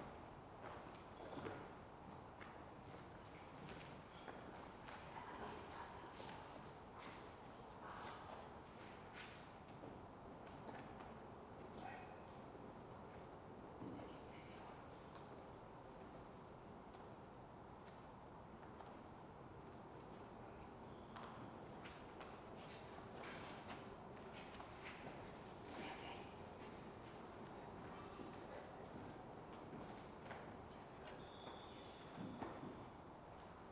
cathedrale de cadiz, en el bajo
cathedrale de cadiz, bajo, Kathedrale, Grabkammern, andalucia, schritte, flüstern